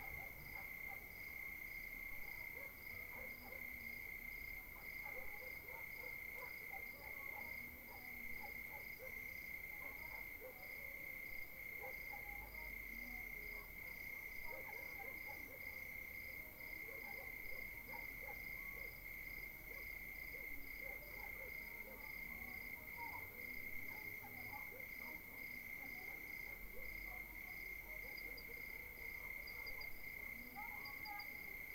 Ruelle des Artisans, CILAOS Réunion - 20190120 2300
Paysage sonore nocturne au clair de lune.
ZoomH4N
20 January 2019, 23:00